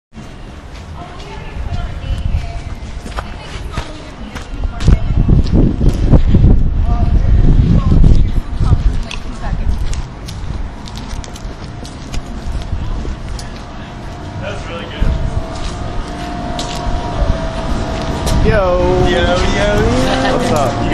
armory square, people talking on street
empire brewery, street talk, tdms11green
31 January 2011, 14:06